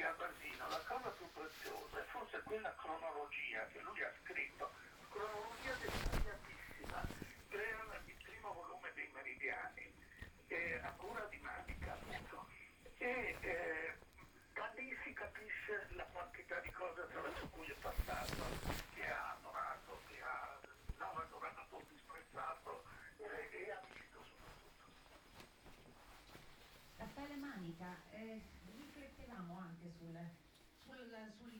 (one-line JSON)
{"title": "Ascolto il tuo cuore, città. I listen to yout heart, city. Several chapters **SCROLL DOWN FOR ALL RECORDINGS** - Shopping afternoon in the time of COVID19 Soundwalk", "date": "2020-03-23 03:10:00", "description": "\"Shopping afternoon in the time of COVID19\" Soundwalk\nChapter XIX of Ascolto il tuo cuore, città. I listen to yout heart, city. Chapter XIX\nMonday March 23 2020. Short walk and shopping in the supermarket at Piazza Madama Cristina, district of San Salvario, Turin thirteen after emergency disposition due to the epidemic of COVID19.\nStart at 3:10 p.m., end at h. 3:48 p.m. duration of recording 38’00”''\nThe entire path is associated with a synchronized GPS track recorded in the (kml, gpx, kmz) files downloadable here:", "latitude": "45.06", "longitude": "7.68", "altitude": "246", "timezone": "Europe/Rome"}